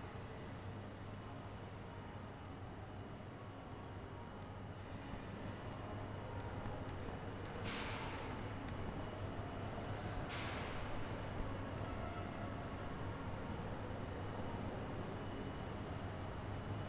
NDSM-Plein, Amsterdam, Nederland - Wasted Sound NDSM Hall
Getting Wasted
‘‘when wasting time you are exposed to time and its existence. When doing the opposite which is doing something ‘useful’ you tend to forget about time and its existence. ........ Here wasting time corresponds with wasting life. Getting wasted is also an escape from the reality of time from this perspective.’’